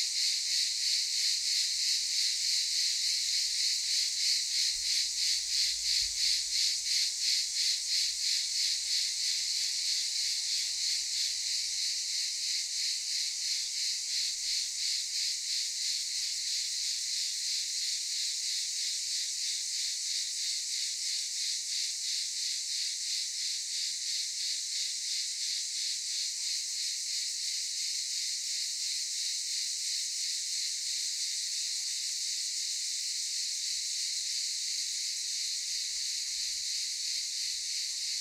Ulupınar Mahallesi, Çıralı Yolu, Kemer/Antalya, Turkey - Cicadas daytime
Aylak Yaşam Camp, cicadas in daytime
28 July 2017, 12:18